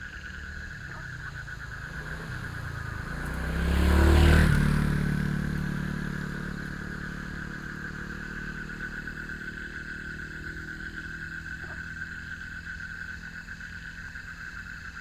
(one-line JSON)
{"title": "桃米里埔里鎮, Taiwan - Frogs chirping", "date": "2016-04-18 19:04:00", "description": "Frogs chirping, Garbage trucks, Traffic Sound", "latitude": "23.94", "longitude": "120.93", "altitude": "471", "timezone": "Asia/Taipei"}